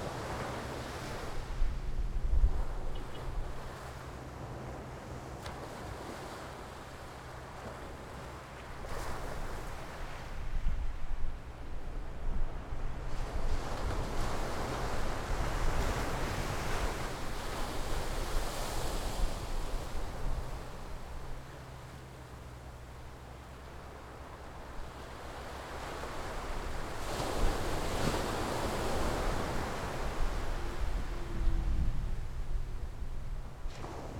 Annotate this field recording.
Sound of the waves, Very hot weather, Nearby road under construction, Zoom H6 XY